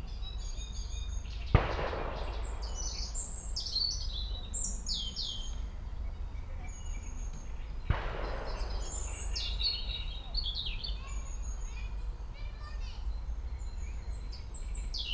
aleja Spacerowa, Siemianowice Śląskie - birds, kids, shots
aleja Spacerowa, Siemianowice, playground at the leisure and nature park, kids playing, birds (robin, great read warbler) singing, heavy shooting from the nearby range.
(Sony PCM D50, DPA4060)